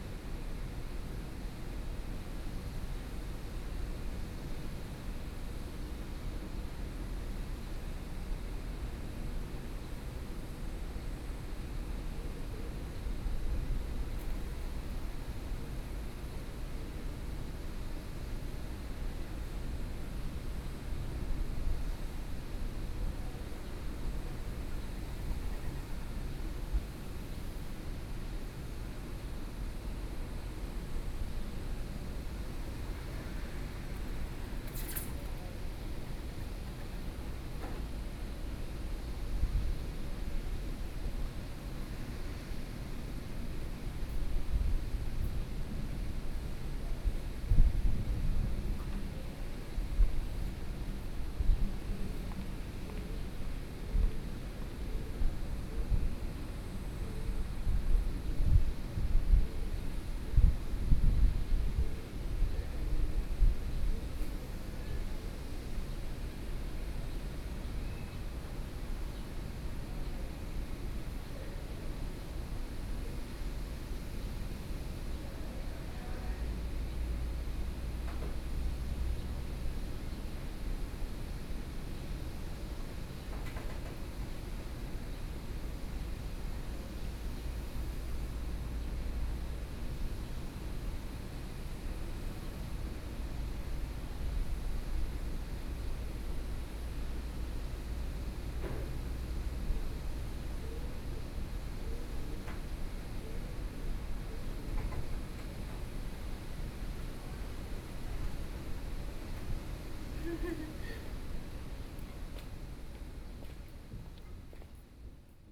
Fugang Station, Taoyuan County - platform
waiting in the platform, Sony PCM D50+ Soundman OKM II
Taoyuan County, Taiwan, 14 August 2013